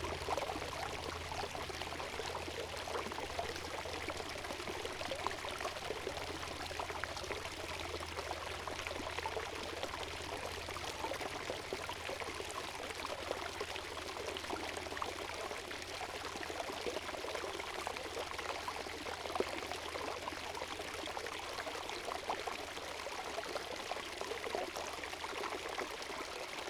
Lithuania, back fountain of Taujenai manor
Vilniaus apskritis, Lietuva, European Union